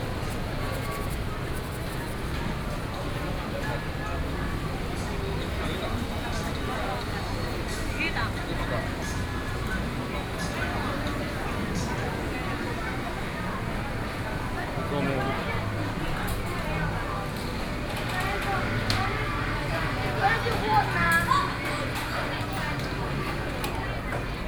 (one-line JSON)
{"title": "聖後街, 宜蘭市小東里 - Walking through the Night Market", "date": "2014-07-25 20:07:00", "description": "Walking through the Night Market, Traffic Sound, Tourist, Various shops voices\nSony PCM D50+ Soundman OKM II", "latitude": "24.76", "longitude": "121.76", "altitude": "13", "timezone": "Asia/Taipei"}